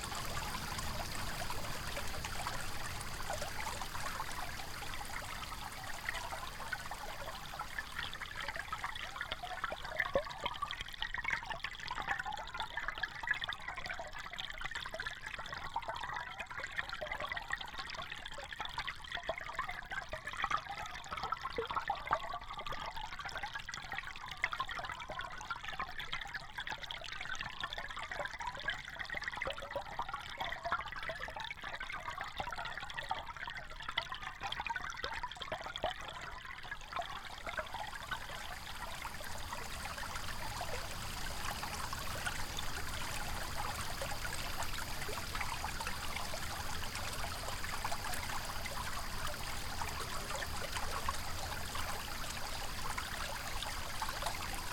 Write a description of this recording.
Ambient recording of Coler Creek fades to hydrophone recording at 45 seconds and then back to ambient at 1:15.